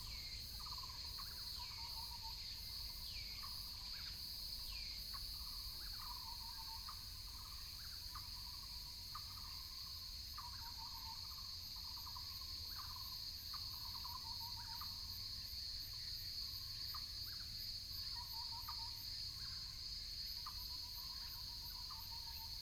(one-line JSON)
{"title": "十六份產業道路, Hengshan Township - Morning in the mountains", "date": "2017-09-12 07:46:00", "description": "birds sound, Morning in the mountains, Insects sound, Cicadas sound, Binaural recordings, Sony PCM D100+ Soundman OKM II", "latitude": "24.75", "longitude": "121.16", "altitude": "227", "timezone": "Asia/Taipei"}